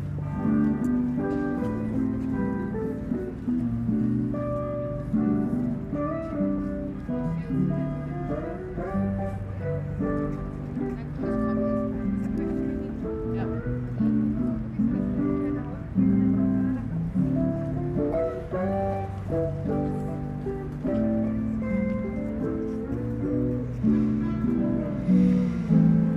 Mitte, Berlin, Germany - Cathedral Bell with guitar accompaniment, or vice versa
Late afternoon busker plays in time, sort of, with the cathedral bell.